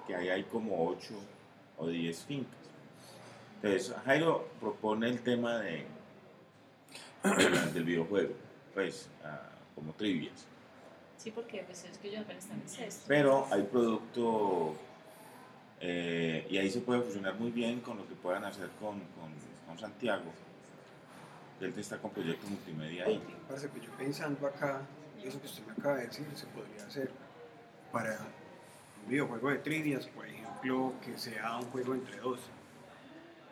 Cl., Armenia, Quindío, Colombia - Una tarde en la IU EAM
docentes hablando de proyecto integrador, relacionado con el café